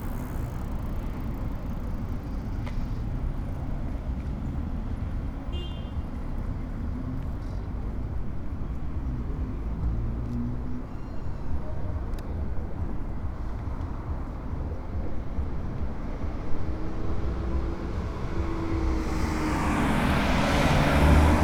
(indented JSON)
{
  "title": "Av. Panorama, Valle del Campestre, León, Gto., Mexico - Bulevar Campestre, en el Parque de Panorama durante el primer día de la fase 3 de COVID-19.",
  "date": "2020-04-21 14:55:00",
  "description": "Campestre boulevard in Panorama Park during the first day of phase 3 of COVID-19.\n(I stopped to record while going for some medicine.)\nI made this recording on April 21st, 2020, at 2:55 p.m.\nI used a Tascam DR-05X with its built-in microphones and a Tascam WS-11 windshield.\nOriginal Recording:\nType: Stereo\nEsta grabación la hice el 21 de abril 2020 a las 14:55 horas.",
  "latitude": "21.15",
  "longitude": "-101.70",
  "altitude": "1825",
  "timezone": "America/Mexico_City"
}